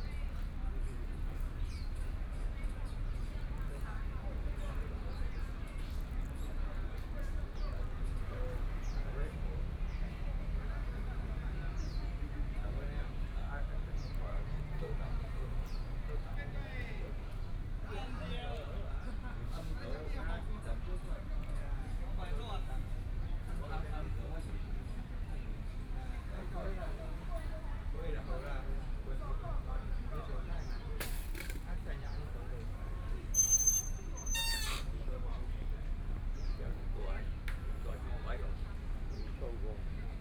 In the shade under a tree, Traffic Sound, Hot weather, Tourist
Kaohsiung City, Taiwan, 14 May 2014